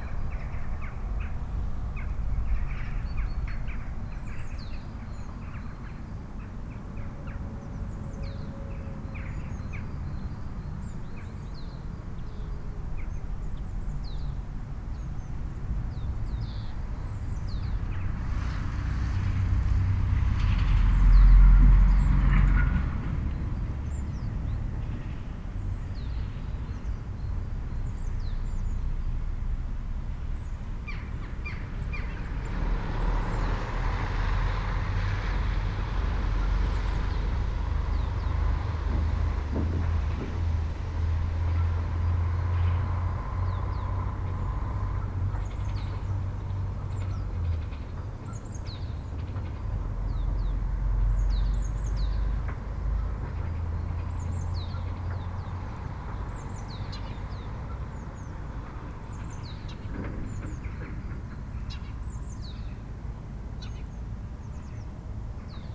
Norra Vånga - Norra Vånga village
Field recording of the village Norra Vånga on a sunny spring day. Recorded with Zoom H5 using custom made binuaral microphones. Sounds best with headphones.
Kvänum, Sweden, 3 March